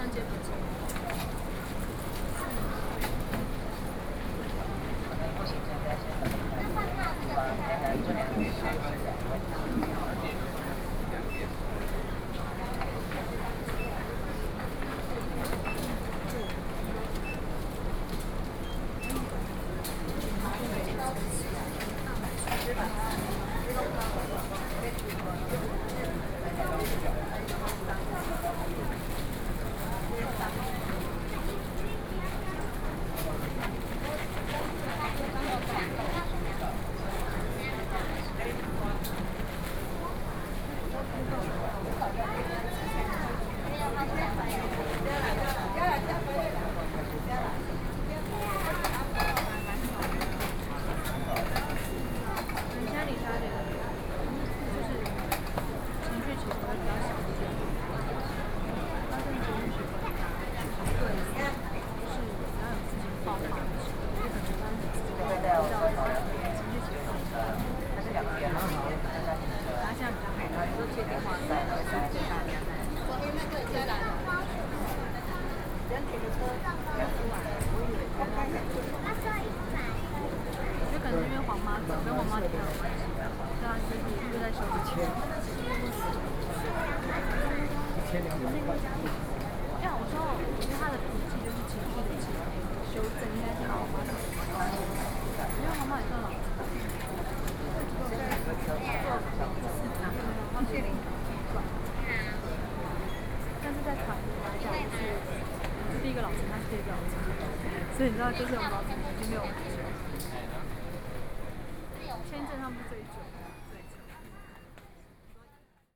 Taipei Main Station - Ticket office
Waiting in front of the Ticket office, Sony PCM D50 + Soundman OKM II
26 July 2013, ~14:00